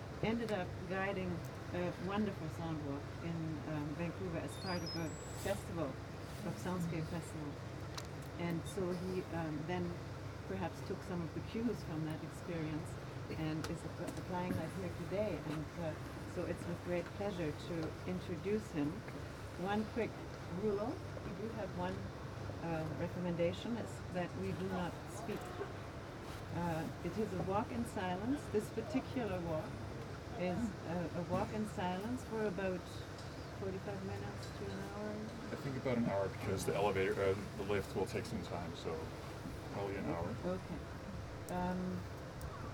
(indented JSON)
{
  "title": "soundwalk, Koli, Finland, Suomi, Suomen tasavalta - soundwalk, Koli",
  "date": "2010-06-18 12:30:00",
  "description": "Koli, soundwalk, introduction, ideas, words, Finland, WFAE, Hildegard Westerkamp, Suomi",
  "latitude": "63.10",
  "longitude": "29.82",
  "altitude": "91",
  "timezone": "Europe/Helsinki"
}